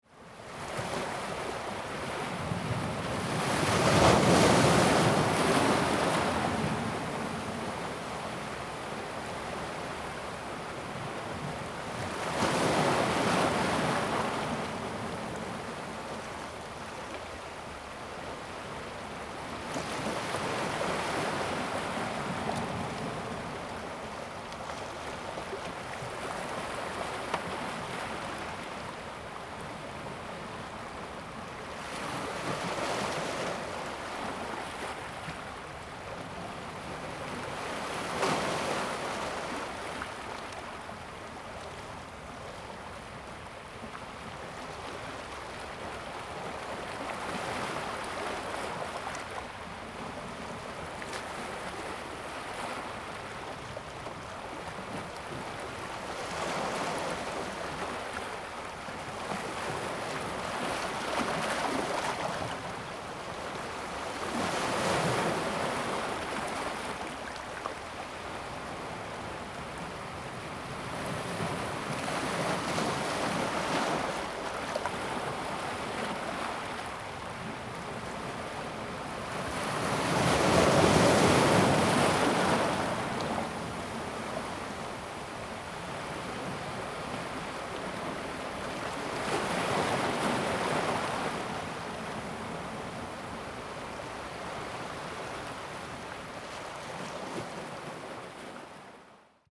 溪口, 淡水區, New Taipei City - sound of the waves
Sound of the waves, coastal
Zoom H2n MS+XY
New Taipei City, Tamsui District, 21 November 2016, 16:28